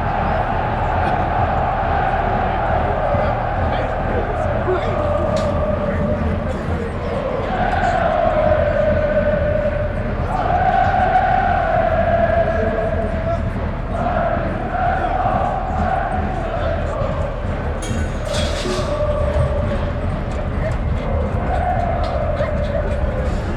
Bergeborbeck, Essen, Deutschland - essen, rwe stadion, soccer cup match
At the RWE soccer station during a soccer cup match. The sound of fireworks, fans chanting, the voice of the stadium speaker and the voice of the security guards, police and their dogs.
Am RWE Stadion während eines Pokal Spiels. Der Klang von Feuerwerkkörpern, Fangesänge, die Stimme des Stadionsprechers, Ordnern und Polizei mit ihren Hunden.
Projekt - Stadtklang//: Hörorte - topographic field recordings and social ambiences
Essen, Germany, 8 April 2014